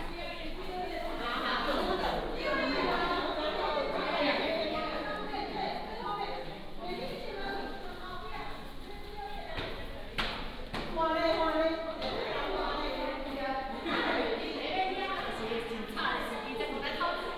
At the station, Swipe into the platform